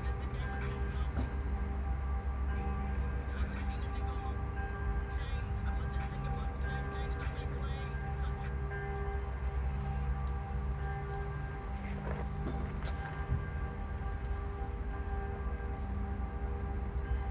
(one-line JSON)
{"title": "Stadlerstraße, Linz, Austria - 12noon Saturday siren plus bells and rap on a phone", "date": "2020-09-12 11:58:00", "description": "Every Saturday at noon Linz tests its warning sirens - a sound heard city wide. The 12 o'clock bells ring at the same time. At this place in Bindermichl Park they all sound from the mid distance. Rap playing from a kid's phone is nearer, as is the shuffling of his feet on gravel. There is a strong wind from the south blowing autobahn roar towards this spot.", "latitude": "48.27", "longitude": "14.30", "altitude": "283", "timezone": "Europe/Vienna"}